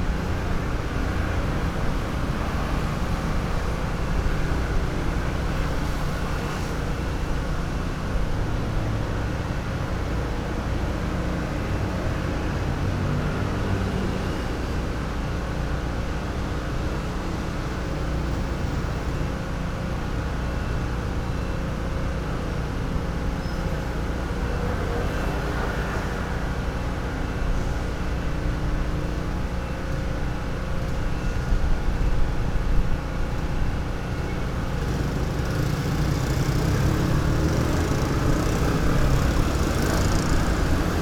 Nanzih District, Kaohsiung - Traffic Noise
Traffic Noise, Sony PCM D50